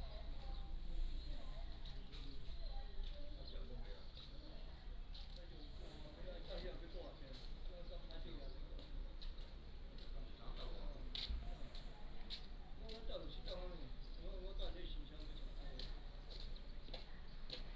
岐頭遊客中心, Baisha Township - Small pier
Small village, Traffic Sound, Small pier, Visitor Center